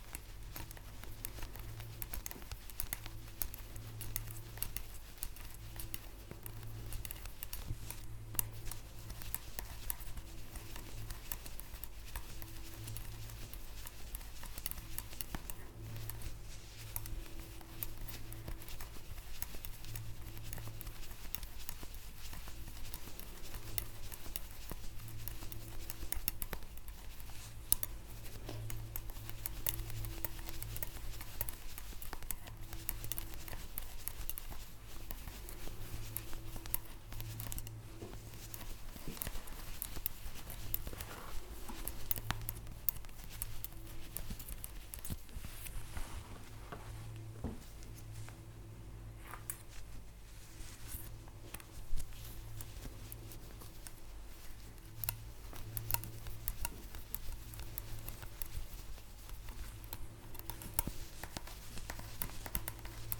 Hazel Tindall knitting with a belt, Aith, Shetland Islands, UK - Hazel Tindall - crowned the World's fastest knitter in 2008 - knitting with a traditional Shetland knitting belt
In 2008, Hazel Tindall won the International Championship for the World's Fastest Knitter, completing 262 stitches in under 3 minutes, which is completely amazing, and definitely a knitting speed to aspire to! In Shetland, knitters have traditionally knitted garments with the aid of a special belt. The belt is made of leather and has a stuffed cushion with holes in it, stuffed with horsehair. Into this stuffed cushion, the knitter can shove the end of a very long steel needle, freeing up the hand which would have otherwise held that needle, and holding the garment firmly in place while the knitter progresses with it. Many of the traditional Shetland garments such as "allovers" (sweaters covered all over with a coloured pattern) are knit on long steel needles in this way. Hazel has a beautiful collection of old knitting belts, many of which are worn and show signs of being damaged by repeatedly having sharp steel needles shoved into them!
7 August, 10:21